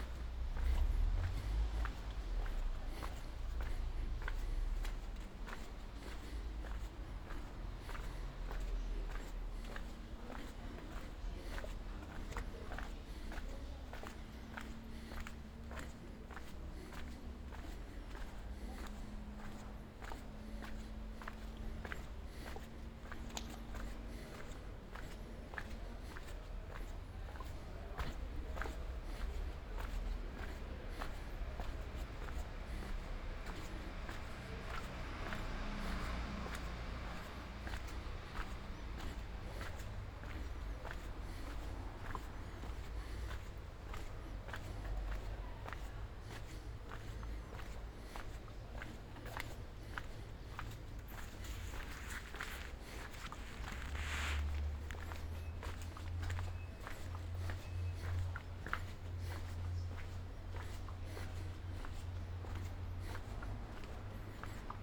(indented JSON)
{
  "title": "Ascolto il tuo cuore, città. I listen to your heart, city. Several chapters **SCROLL DOWN FOR ALL RECORDINGS ** - Round Midnight Ferragosto walk back home in the time of COVID19: soundwalk.",
  "date": "2021-08-14 23:46:00",
  "description": "\"Round Midnight Ferragosto walk back home in the time of COVID19\": soundwalk.\nChapter CLXXX of Ascolto il tuo cuore, città. I listen to your heart, city\nFriday, August 14th, 2021. More than one year and five months after emergency disposition due to the epidemic of COVID19.\nStart at 11:46 p.m. end at 00:45 a.m. duration of recording 48’55”\nAs binaural recording is suggested headphones listening.\nThe entire path is associated with a synchronized GPS track recorded in the (kmz, kml, gpx) files downloadable here:\nThis path is the same as the second path of one year before, August 14th, 2020:",
  "latitude": "45.06",
  "longitude": "7.68",
  "altitude": "242",
  "timezone": "Europe/Rome"
}